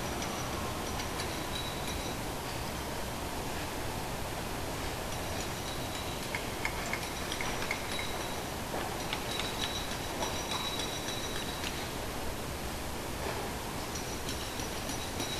Neubau im Guting, Taipei, 20081212 Mittag